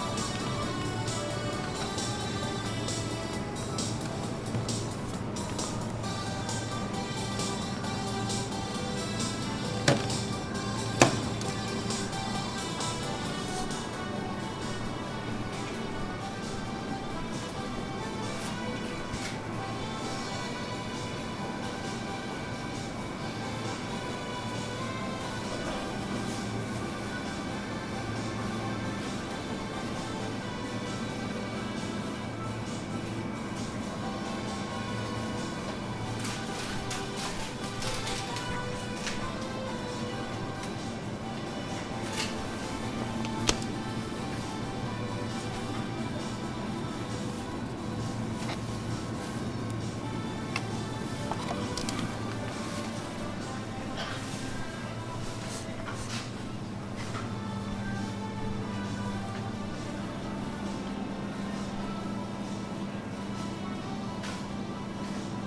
Japan Präfektur ChibaMatsudoShinmatsudo, ７丁目 - Maruetsu-supermarket
this is a walk through my prefered supermarket; this was real shopping to provide you with the original sounds you may hear when go for shopping there;
北葛飾郡, 日本